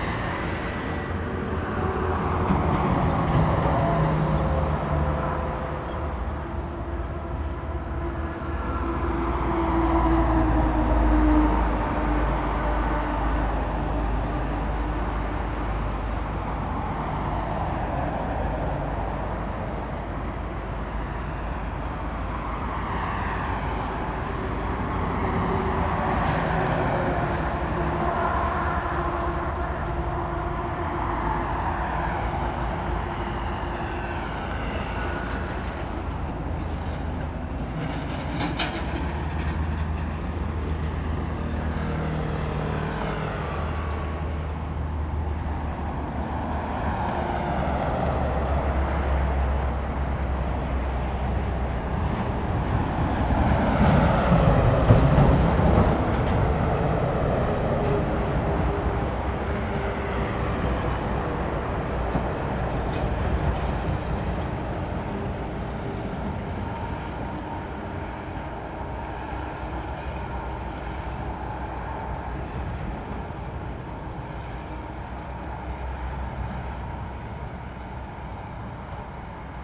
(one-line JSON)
{"title": "Provincial Highway, Linkou Dist., New Taipei City - highway", "date": "2017-01-04 11:09:00", "description": "highway, Traffic sound\nZoom H2n MS+XY", "latitude": "25.12", "longitude": "121.31", "altitude": "13", "timezone": "GMT+1"}